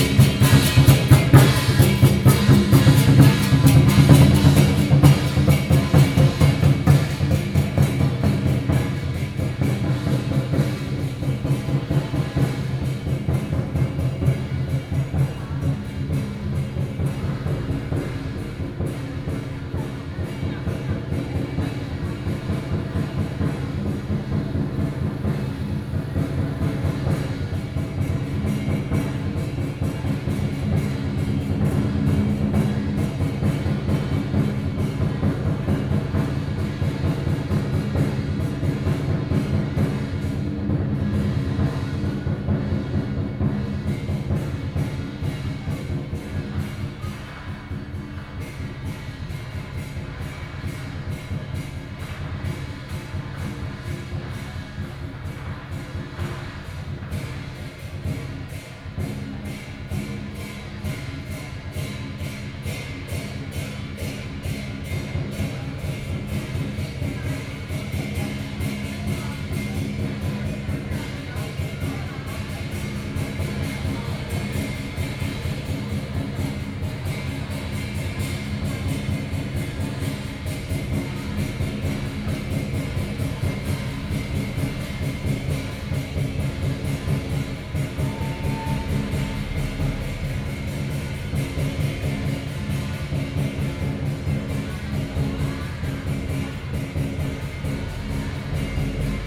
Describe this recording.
Traditional temple festivals, Gong, Traditional musical instruments, Binaural recordings